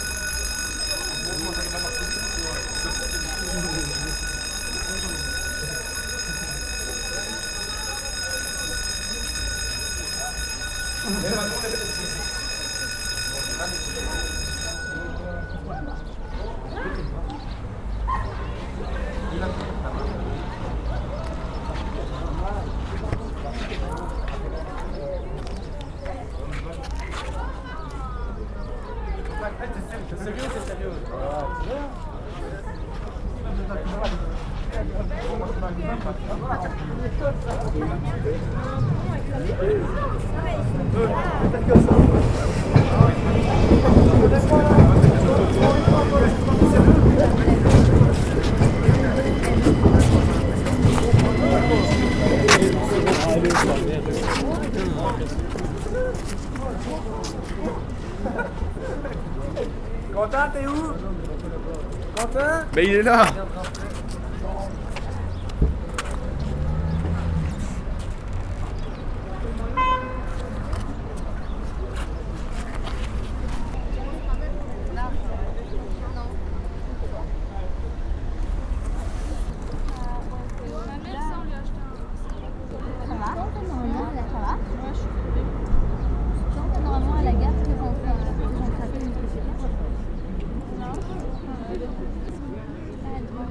Court-St.-Étienne, Belgique - En attendant le train
Students waiting for the train in the small town of Court-St-Etienne, a friday evening.